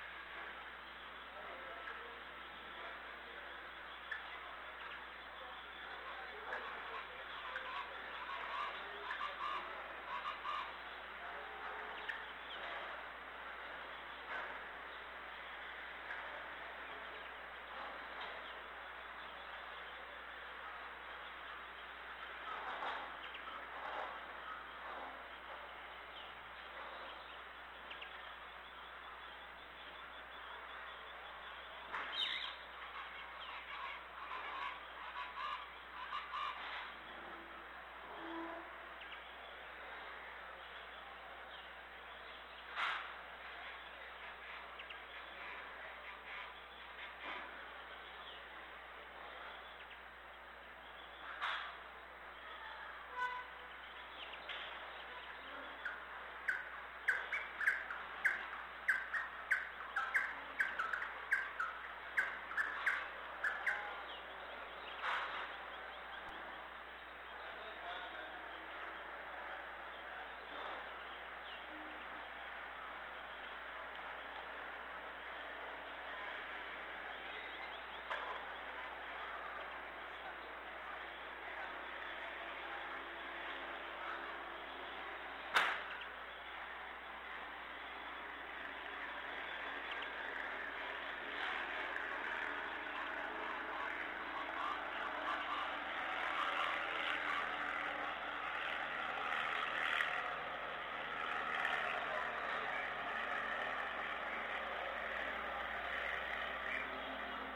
Recorded close to the drawing room.
Atlantico, Colombia